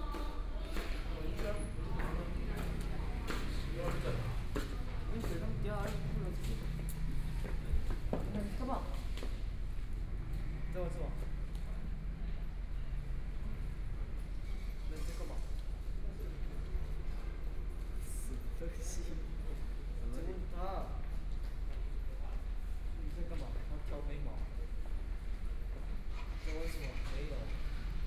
Hualien Station, Taiwan - In the station lobby
Dialogue among high school students, Traffic Sound, Mobile voice, Binaural recordings, Zoom H4n+ Soundman OKM II